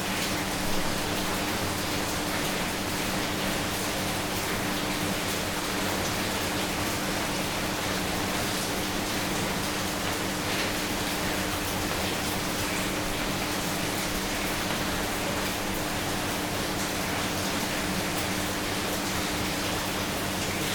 Fontoy, France - Havange schaft
Very busy and noisy ambience below the Havange schaft. The pumps are turning hard and there's a powerful downfall of water.